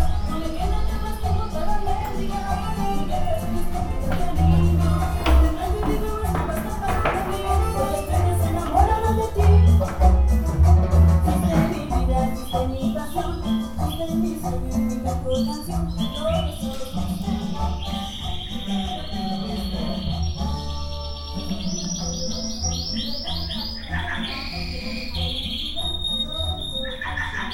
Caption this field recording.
Entering a neighborhood (called "vecindad" in Mexico) and walking through its corridors. I made this recording on November 29, 2021, at 1:38 p.m. I used a Tascam DR-05X with its built-in microphones and a Tascam WS-11 windshield. Original Recording: Type: Stereo, Esta grabación la hice el 29 de noviembre de 2021 a las 13:38 horas.